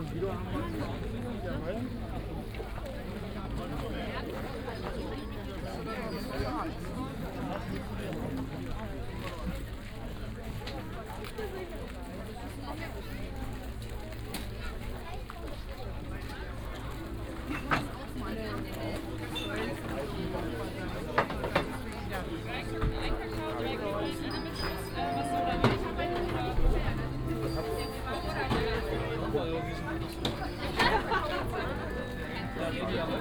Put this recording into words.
Berlin Kladow, weekend tourist's place preferably approached by the public transport ferry boat from Wannsee station, walk over Christmas market, singers, voices, market ambience, (Sony PCM D50, OKM2)